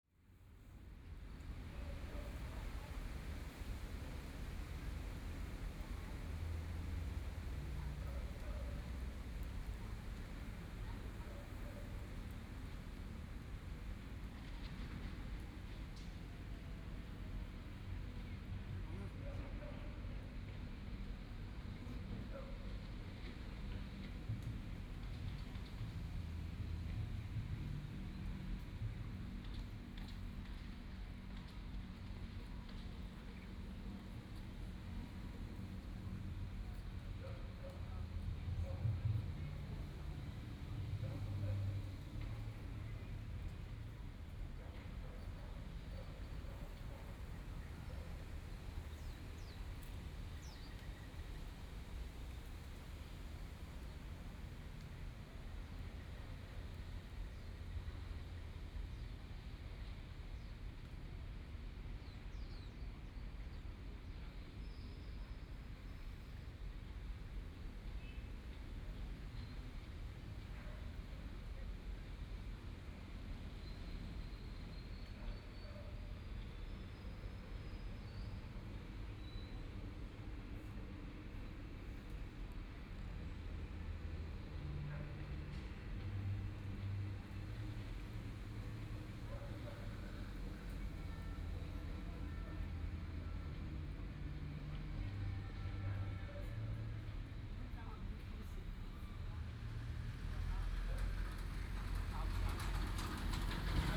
碧湖公園, Neihu District - in the Park
in the Park, Distant school students are practicing traditional musical instruments, Aircraft flying through
Binaural recordings, Sony PCM D100 + Soundman OKM II
Taipei City, Taiwan, 27 February